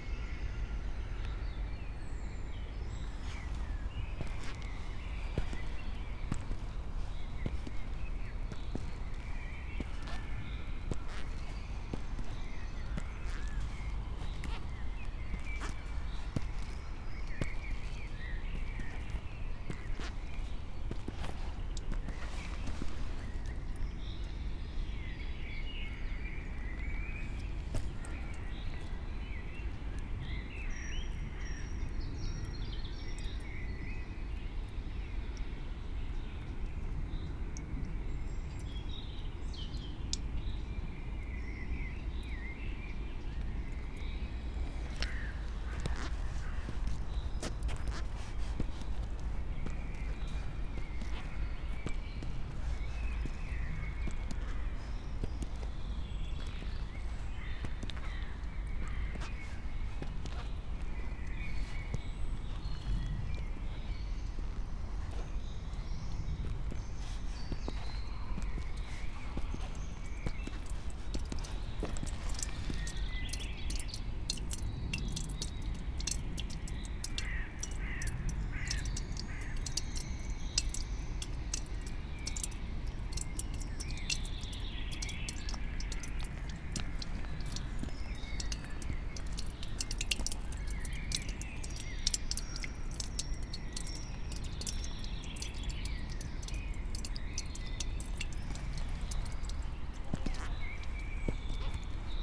inside the pool, mariborski otok - white dots, walking
blue deepens with dark clouds below puddles, pink raincoat with white dots, who would imagine more disturbing creature in this greenish-scape ...